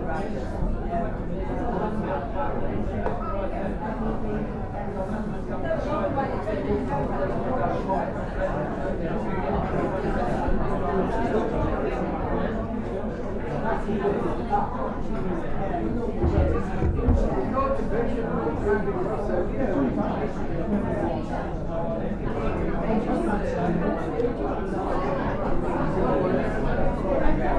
Happy Oldies, Southwold Pier, Suffolk, UK - Cafe
A crowd of pensioners, like me, in the cafe on Southwold pier. My mics are covered in a fake fur windshield mistaken by one indignant lady as a dog in my bag. We all laughed about it. MixPre 3 with 2 x Bayer Lavaliers.
United Kingdom